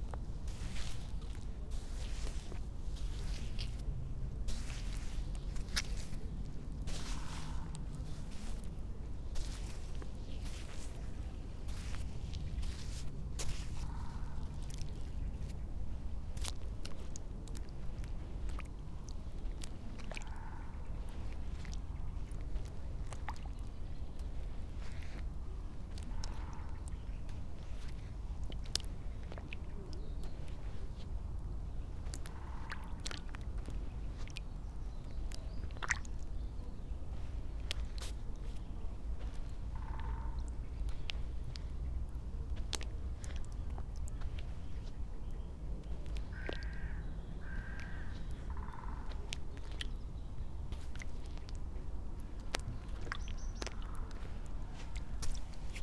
spring, woodpecker, airplane ...